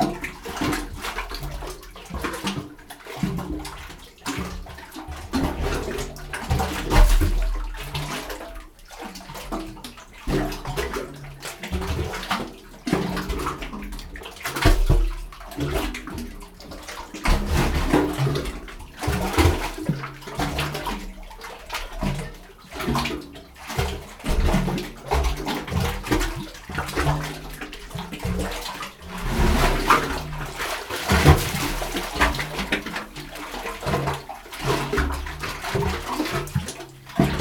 Satlia, Crete - opening in the rock filled with sea water
a hole in the rocks at the sea. waves pumping water into the opening form underneath the rocks. thumpy splashes, pipe like, juicy, metallic reverb.